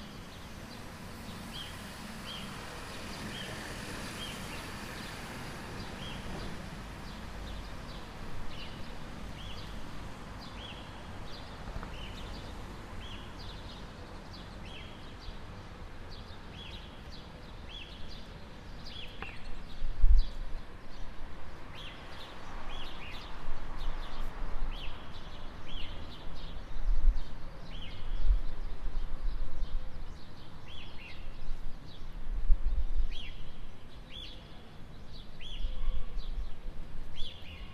Al. Papanastasiou, Keratsini, Greece - Morning Bird singing
Recorded with Zoom H4N Pro
7 May 2020, ~21:00